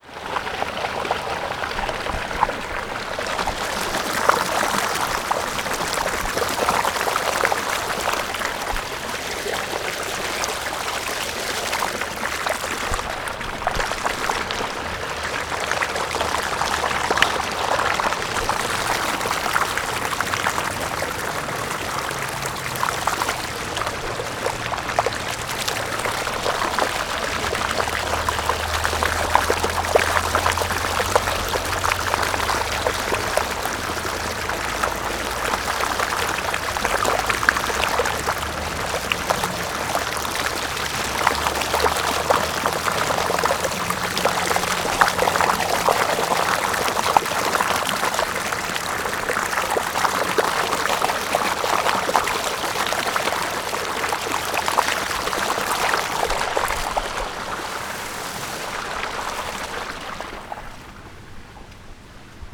north of Nablus, creek
creek coming down the hill to a parking spot. project trans4m Orchestra